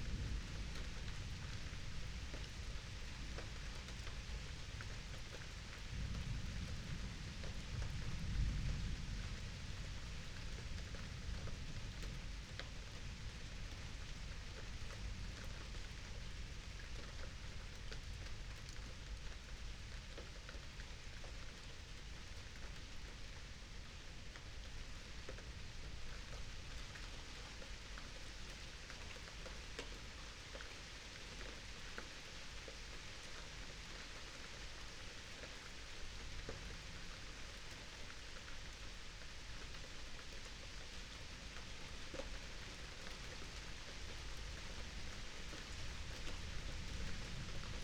Berlin Bürknerstr., backyard window - Hinterhof / backyard ambience, light rain and thunder
00:35 Berlin Bürknerstr., backyard window, light rain and distant thunderstorm around midnight
(remote microphone: AOM5024HDR | RasPi Zero /w IQAudio Zero | 4G modem
Berlin, Germany, 14 July, ~01:00